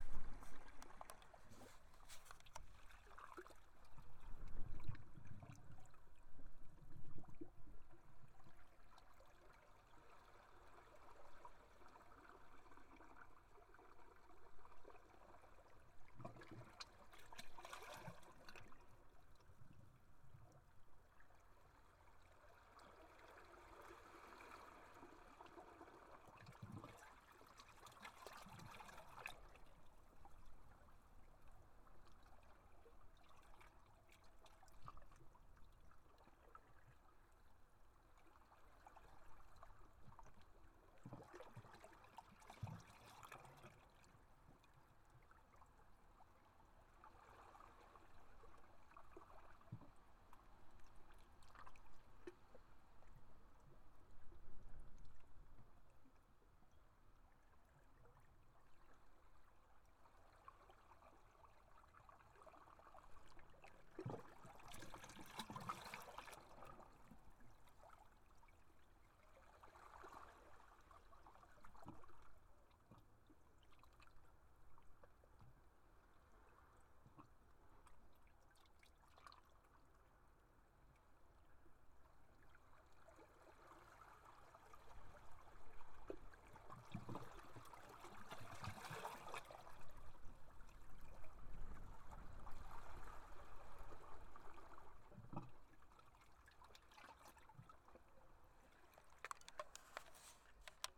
Brett Avenue, Takapuna, Auckland, New Zealand - Sea waves into lava log hole
Recorded with directional mic inside a lava hole by the beach, outer edge of the hole. Sounds of walkers in the distance.
26 August 2020, 14:56